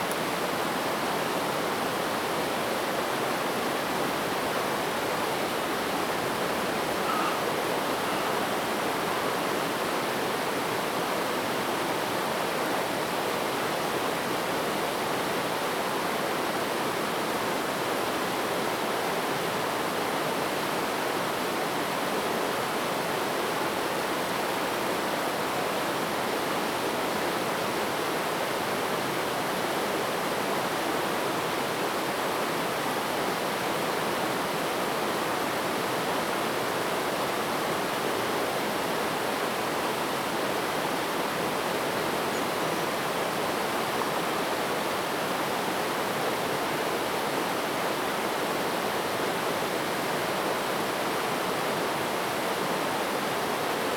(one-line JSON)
{"title": "桃米橋, 桃米里 Puli Township - Next to the river bank", "date": "2016-03-25 13:05:00", "description": "Sound streams, Traffic Sound\nZoom H2n MS+XY", "latitude": "23.94", "longitude": "120.93", "altitude": "468", "timezone": "Asia/Taipei"}